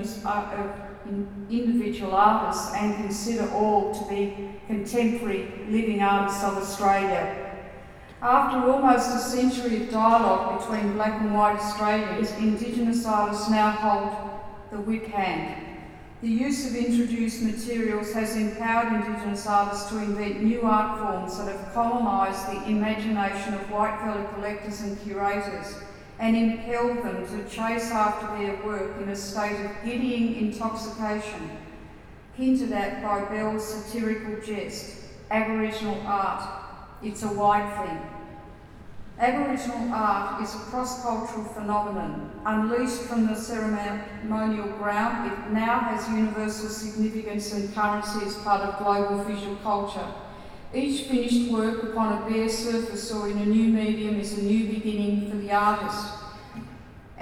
neoscenes: Talking Blak - Tony Birch - neoscenes: Talking Blak
Melbourne VIC, Australia